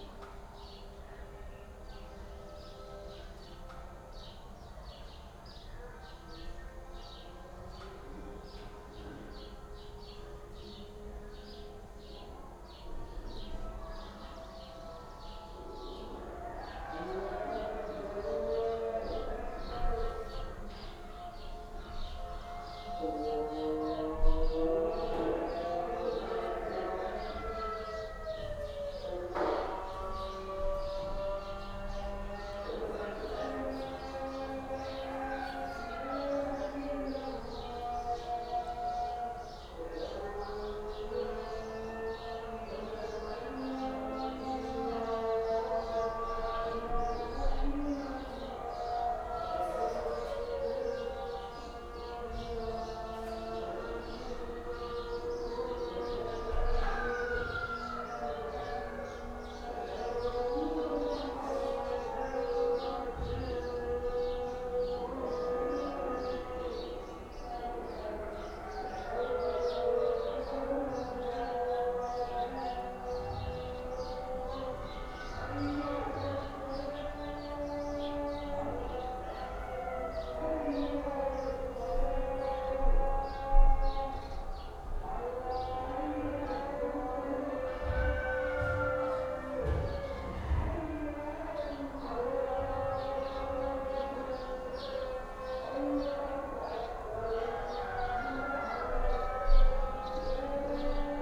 yard ambience, prayer calls from nearby and distant mosques, dogs start to howl
(Sony D50, DPA4060)
Marrakesh, Morocco, 25 February